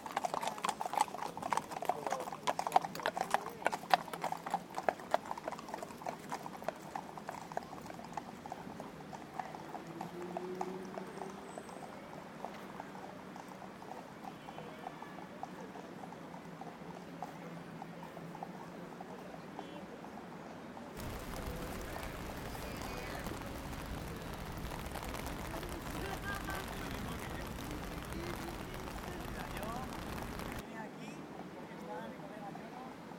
Speakers Corner on a Weekday - July 15, 2009. Unit 2 Architectural Association
UK, 15 July